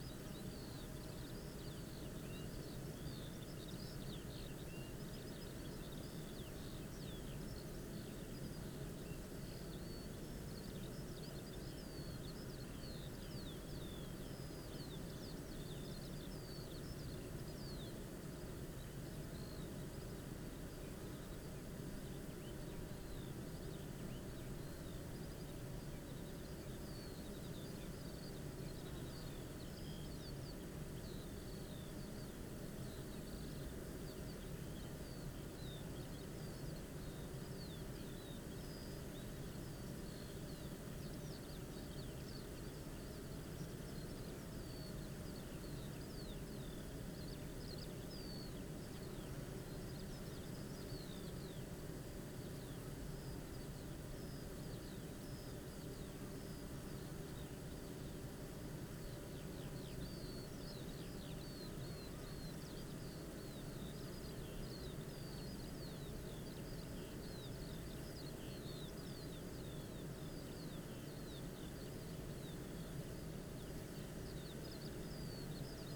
Green Ln, Malton, UK - bee hives ...
bee hives ... dpa 4060s clipped to bag to Zoom H5 ... details as above ... as was leaving a sprayer arrived and doused the beans with whatever dressing it was spraying ... no idea what effect would have on the bees or hives ..?